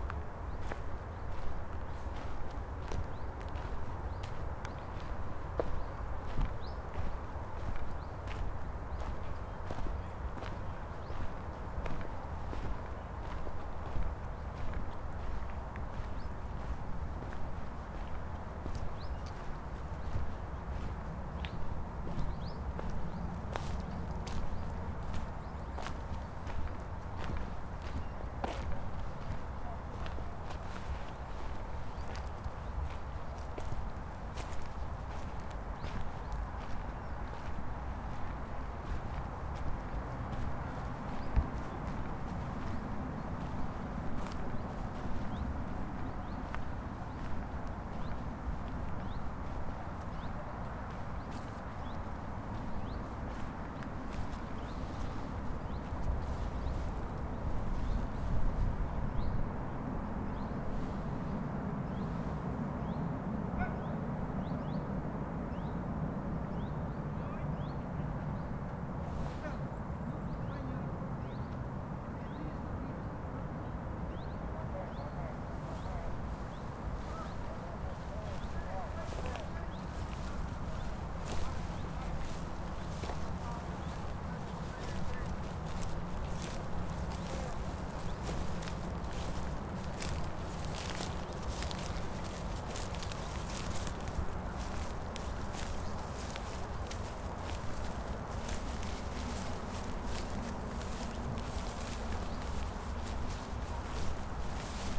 Campolide, Portugal - Passeio Sonoro: Calhau - Serafina
Passeio sonoro entre o Parque do Calhau e o Bairro da Serafina, em Lisboa.
5 November, ~15:00